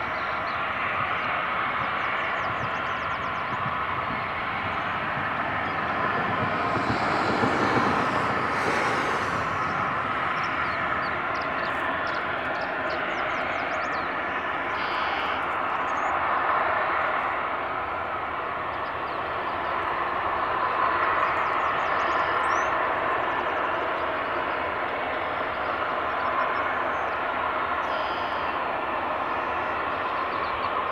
Kewaunee Nuclear Power Plant - Kewaunee Nuclear Plant Shut Down
On May 7, 2013 @ 11:15am, the Kewaunee nuclear power plant generated its last megawatt. Steam blowoff began shortly afterwards, producing the constant hissing sound in this recording. At over 500 degrees F, this pressurized vapor billowed out from vents around the base of the cooling tower for nearly 24 hours. Turbines stopped. The conducting power lines radiating outwards, strung high above surrounding dairy farms, went dead. The plant was taken off the grid forever. The radioactive waste will take months to be placed into cooling pools. By 2019, the radioactive fuel will be encased in temporary storage casks. Unless a permanent waste burial site is opened in America, this material will be buried here for the indefinite future, slowly shedding radioactive energy for millions of years. As with all decommissioned nuclear sites, this place will outlast almost every other manmade object on Earth, long after our extinction as a species. Behold another monument to the Anthropocene.